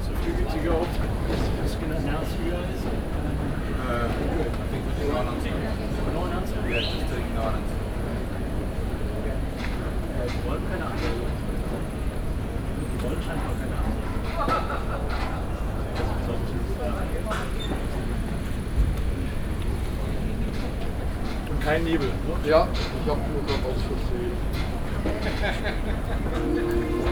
Zellerau, Würzburg, Deutschland - Würzburg, Mainwiesen, Hafensommer Fesival, soundcheck
In the audience area of the outdoor Hafensommer festival venue. The sound of the soundcheck of the Jon Hassel group. Technicians talking in the technic booth, some different instrument sounds and then the sound of Jon Hassel playing the trumpet, a coughing, distant audience movements.
soundmap d - social ambiences and topographic field recordings